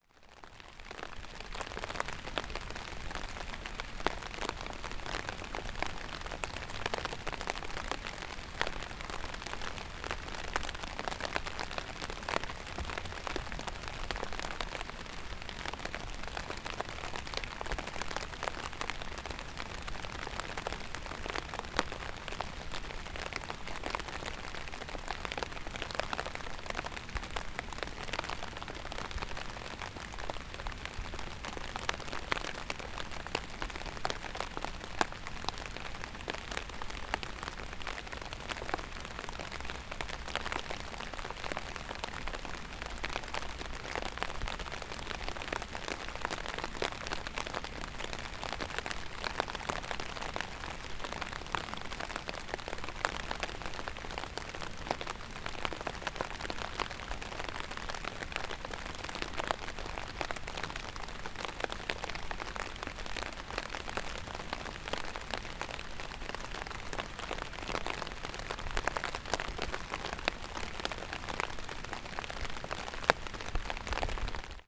Reinsfeld, Deutschland - Regen prasselt auf Einmannzelt
Beste Aufnahme aus drei im Einmannzelt verbrachten Regentagen. Highlights: dickere Tropfen bei 21.8s und 38s; meine Lieblingsstelle jedoch bei 0h 01 min 13.150: ein besonders dickes und dadurch prägnant klingendes Exemplar eines Tropfens.